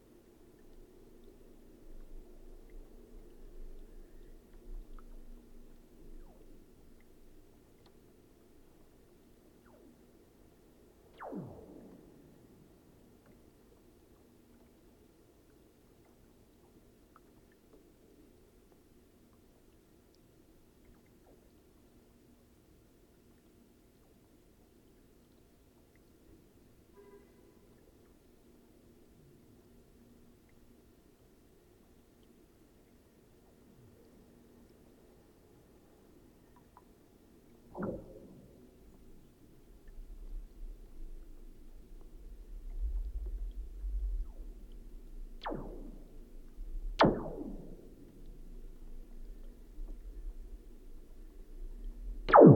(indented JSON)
{
  "title": "Klondike Park Lake Ice Booms, Augusta, Missouri, USA - Ice Booms",
  "date": "2020-12-26 11:13:00",
  "description": "Hydrophone on frozen surface of lake. Ice booming. Water gurgling in ice as it is thawing. Mystery sound at 35s. Booms intensify at 55s. Labadie Energy Center hum.",
  "latitude": "38.58",
  "longitude": "-90.84",
  "altitude": "184",
  "timezone": "America/Chicago"
}